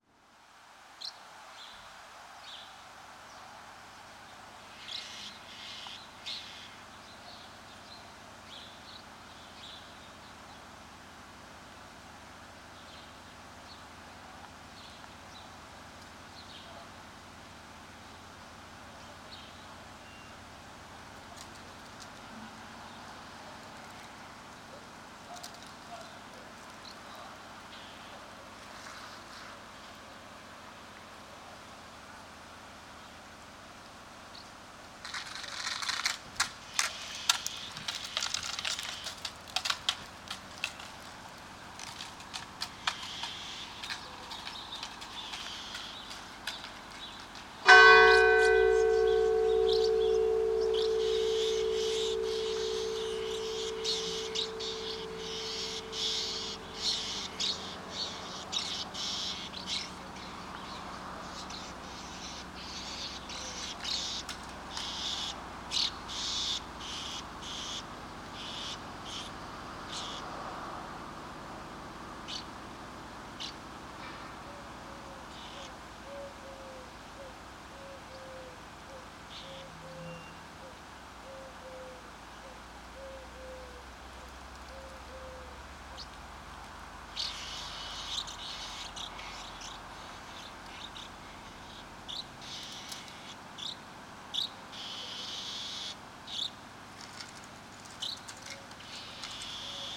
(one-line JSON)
{"title": "Rue du Vieux Bourg, Sauveterre-la-Lémance, France - Swallows - Hirondelles", "date": "2022-08-21 18:30:00", "description": "Tech Note : Sony PCM-M10 internal microphones.", "latitude": "44.59", "longitude": "1.01", "altitude": "502", "timezone": "Europe/Andorra"}